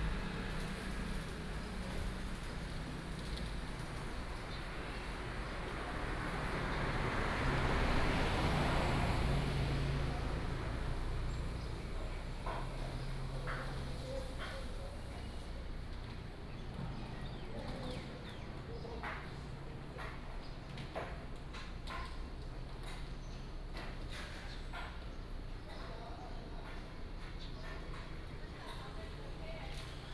太麻里街74-78號, Tavualje St., Taimali Township - Small town street
Morning street, Traffic sound, Bird cry, Seafood shop, Small town street
Binaural recordings, Sony PCM D100+ Soundman OKM II